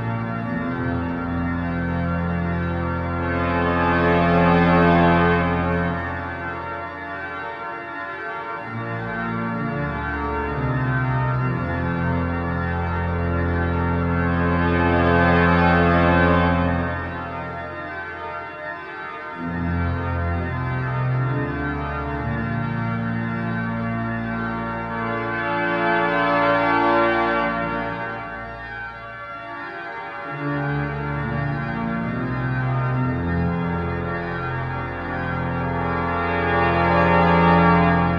Pl. Saint-Sernin, Toulouse, France - organ